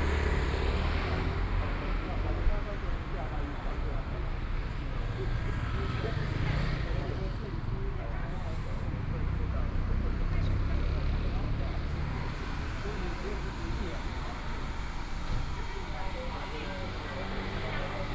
Xihuan Rd., Xihu Township - In front of the beverage store
In front of the beverage store, Traffic Sound, Zoom H4n+ Soundman OKM II, Best with Headphone( SoundMap20140104- 3b )
Changhua County, Taiwan, 4 January 2014